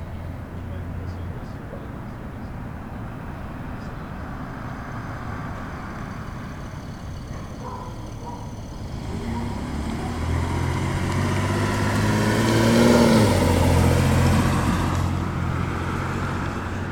Walking home from the hill I hit a hot spot where I started hearing a variety of various noises.